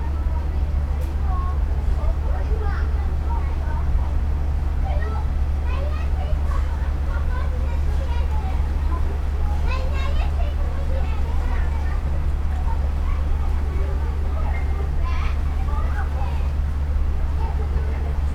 after a heavy storm a lot of water gathered behind the building so a fire brigade has been called to pump out all the water. hum of the fire truck's engine, blips of fireman shortwave transmiter and bitcrushed conversation over the radio. dogs barking with fantastic reverb over the nearby big apartment buildings. kids playing in the water, running around in their wellingtons (roland r-07)